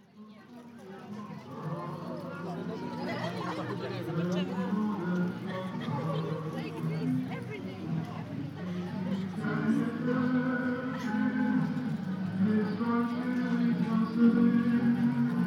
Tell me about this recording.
Binaural recording of an unknown fair or festival. Recorded with Soundman OKM on Sony PCM D100